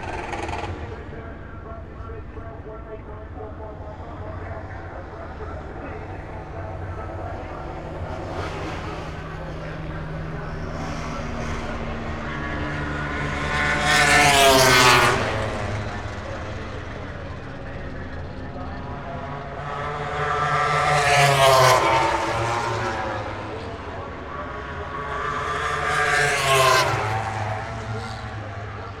Silverstone Circuit, Towcester, UK - British Motorcycle Grand Prix 2018 ... moto grand prix ...
British Motorcycle Grand Prix 2018 ... moto grand prix ... qualifying two ... national pits straight ... lavalier mics clipped to baseball cap ...